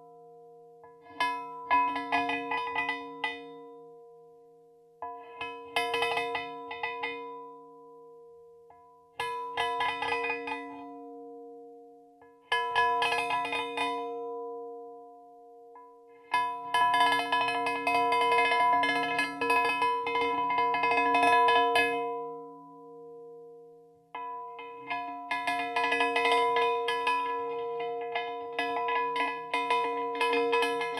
Playing with the statues on the center of Belgium. In first, knocking three times the metal plates, and after reading all the writings in the metal with a small stone. Recorded with two contact microphones.